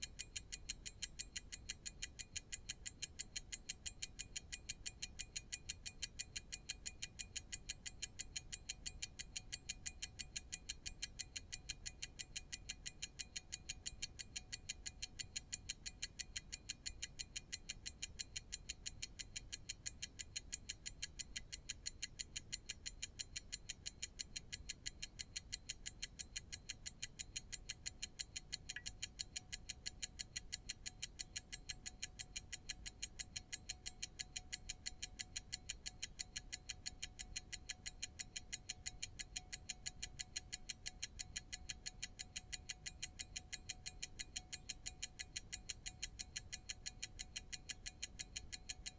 Luttons, UK - a ticking pocket watch ...
a ticking pocket watch ... a wind-up skeleton watch ... contact mics to a LS 14 ...
Malton, UK, 26 February 2020, ~10:00